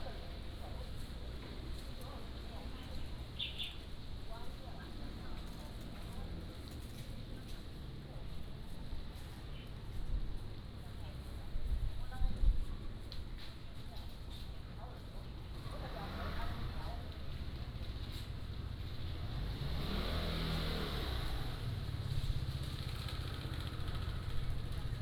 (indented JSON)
{
  "title": "通梁古榕, Baisha Township - Below the ancient banyan",
  "date": "2014-10-22 16:32:00",
  "description": "In front of the temple, Below the ancient banyan, Wind, Traffic Sound",
  "latitude": "23.66",
  "longitude": "119.56",
  "altitude": "11",
  "timezone": "Asia/Taipei"
}